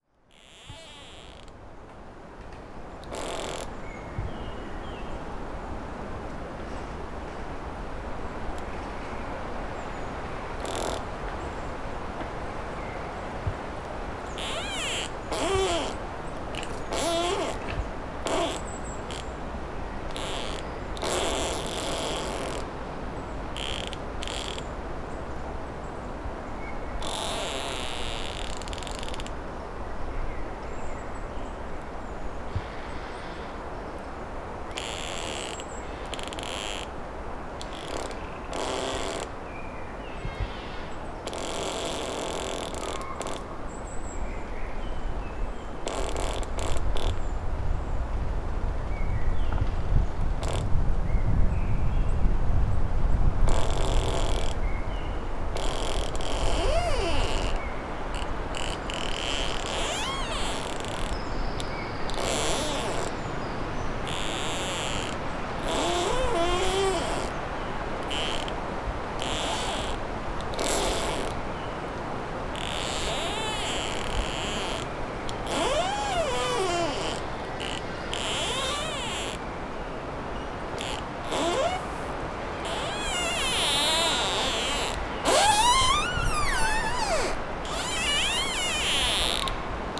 {"title": "Morasko nature reserve, among trees - rubbery trunks", "date": "2015-03-01 14:12:00", "description": "a tree leaning on a different, very tall tree that is swung by strong wind. trunks rubbing against each other making a rubbery squeak.", "latitude": "52.48", "longitude": "16.90", "altitude": "132", "timezone": "Europe/Warsaw"}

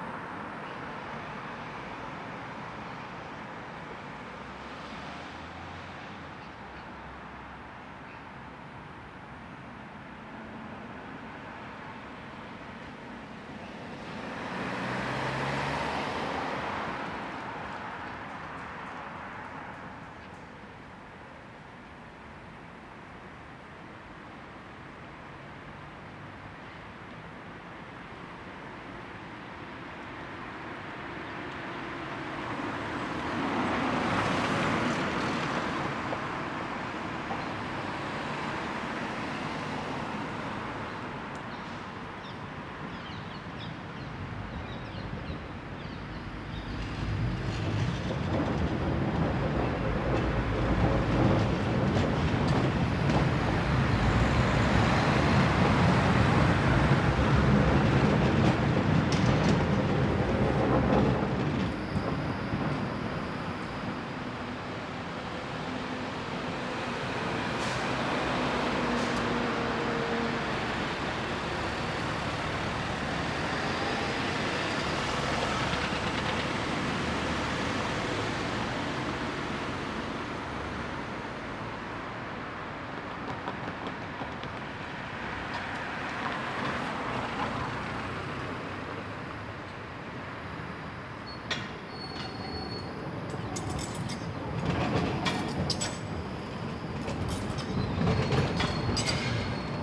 {"title": "RONDPOINT CHURCHILL/ROTONDE CHURCHILL, Uccle, Belgique - Cars and trams", "date": "2022-08-11 07:30:00", "description": "Morning ambience, sunny day.\nTech Note : Sony PCM-D100 internal microphones, XY position.", "latitude": "50.81", "longitude": "4.35", "altitude": "99", "timezone": "Europe/Brussels"}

